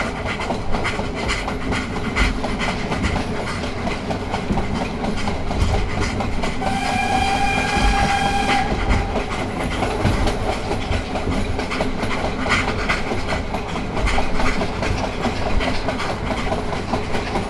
Grodzisk Wlkp, Kolejowa, Grodzisk Wielkopolski, Polska - Ol49-59 steam train.
Ol49-59 steam train from Grodzisk Wielkopolski to Wolsztyn leaving the platform.